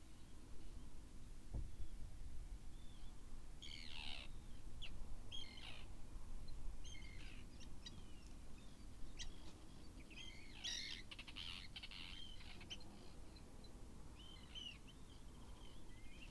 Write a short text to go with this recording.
This is the evening quiet outside the böd, as documented by the little on board microphones on the EDIROL R-09. The situation was very nice, some terns circling above, the heavy wooden doors of the böd gently thudding when stirred by the wind, some tiny insects browsing the rotting seaweed strewn around the bay, the sound of my steps receding into the long, pebbly curve of the beach, and distant baas from sheep and cries from geese occasionally entering into the mix. I loved the peace at Whiteness.